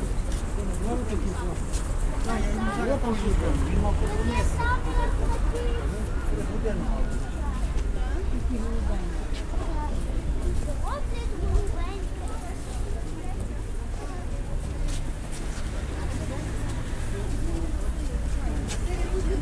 Frankivskyi District, Lviv, Lviv Oblast, Ukraine - Pryvokzalnyi Market
Stroll around the sidewalks surrounding the market, packed with vendors selling home-grown and -made produce. Binaural recording.